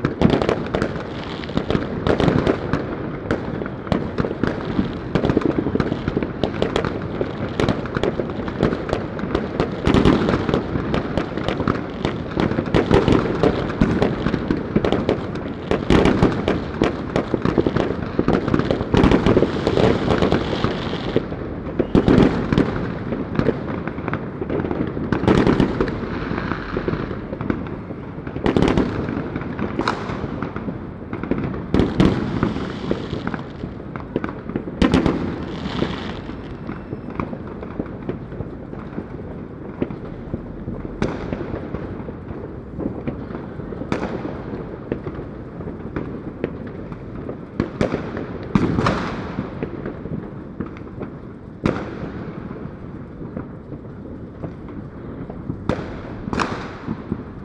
Recording from a rooftop in roughly the city center of Krakow. The excerpt starts around 3 minutes before midnight.
AB stereo recording (29cm) made with Sennheiser MKH 8020 on Sound Devices MixPre-6 II.